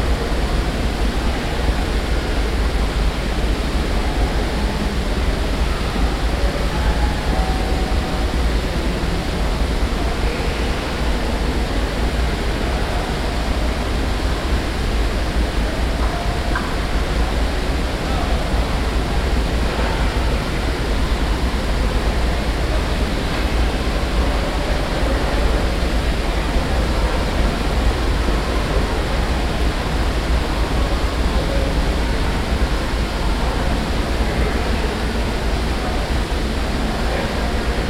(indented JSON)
{
  "title": "Fairfax, Fair Oaks Mall, Waterfall",
  "date": "2011-11-01 18:20:00",
  "description": "USA, Virginia, Mall, Water, Fountain, Binaural",
  "latitude": "38.86",
  "longitude": "-77.36",
  "altitude": "128",
  "timezone": "America/New_York"
}